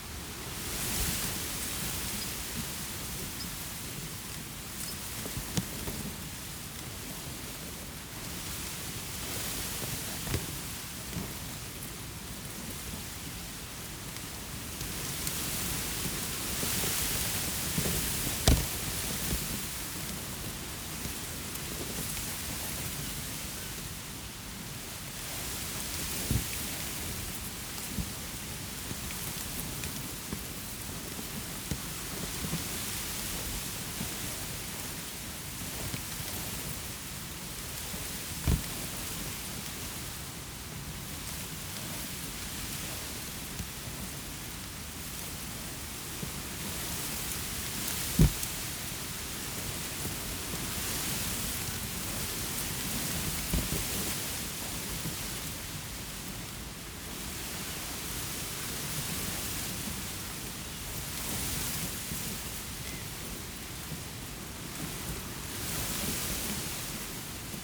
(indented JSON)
{
  "title": "Wind in summer reeds beside the water filled quarry pit, New Romney, UK - Wind in summer reeds beside the water filled quarry pit",
  "date": "2021-07-26 18:23:00",
  "description": "In the late July the reeds are green and their sound in wind has a lovely softness. As they dry through the autumn and winter it becomes more brittle and hard. The occasional churring in this recording is probably a reed warbler. 26/07/2021",
  "latitude": "50.96",
  "longitude": "0.96",
  "altitude": "1",
  "timezone": "Europe/London"
}